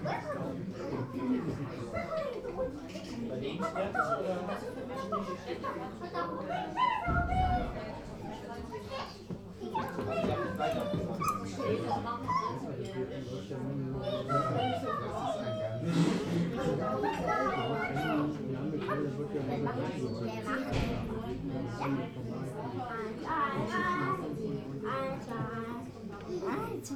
erbach, rheinallee: weinstube - the city, the country & me: wine tavern
wine tavern "maximilianshof" of the wine-growing estate oetinger
the city, the country & me: october 17, 2010
Eltville am Rhein, Deutschland